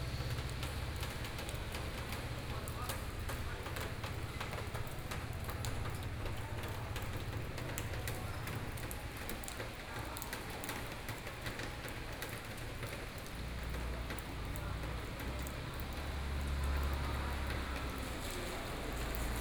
Shacheng Rd., Toucheng Township - Rainy Day
Rainy streets of the town, Binaural recordings, Zoom H4n+ Soundman OKM II
Toucheng Township, Yilan County, Taiwan